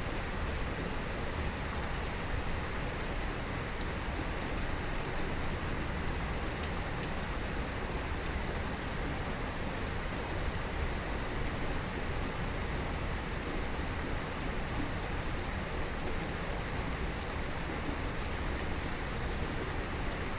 Rain sound2
愛知 豊田 rain